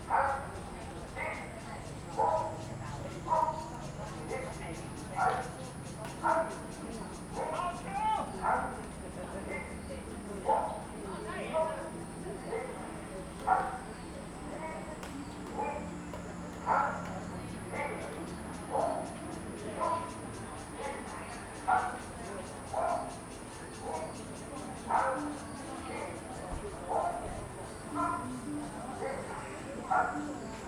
忠烈祠, Hualien City - in the Park
in the Park, Birds and cicadas, A lot of people are doing aerobics
Playing badminton
Zoom H2n MS+XY
Hualien County, Taiwan